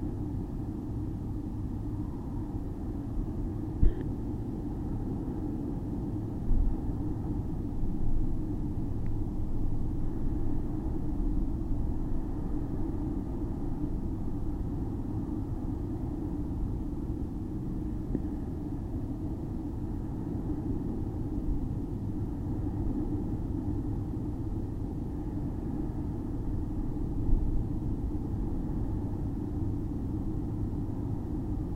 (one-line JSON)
{"title": "Severn Beach Mud 05", "description": "Recording of mud near the Severn Suspension Bridge during a windy night.", "latitude": "51.57", "longitude": "-2.67", "altitude": "4", "timezone": "Europe/Berlin"}